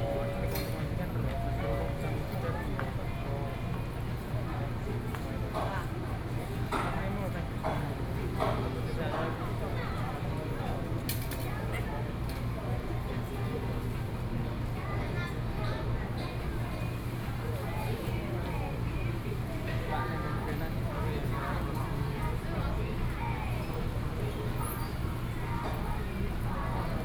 Zhongli Station, Taoyuan County - Station hall
in the Station hall, Zoom H4n+ Soundman OKM II
Taoyuan County, Taiwan, 12 August 2013